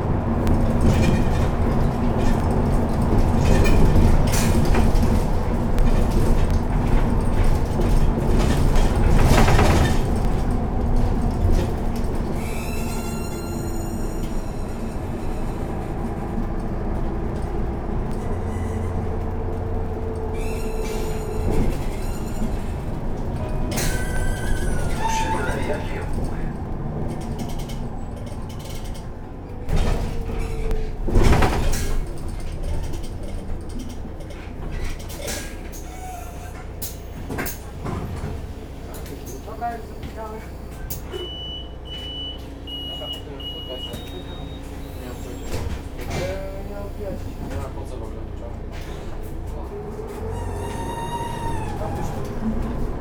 Strozynskiego, Jagielly housing estate, Poznan - squeaky bus 90
ride on a bus line 90. the bus as pretty long and had a bending part in the middle that allows it to take narrow curves. this part of the bus was very squeaky. conversations of the few commuters. usual sounds on the bus, announcer naming the stops, rumble, door beep. (roland r-07 internal mics)
Poznań, Poland, 15 September 2018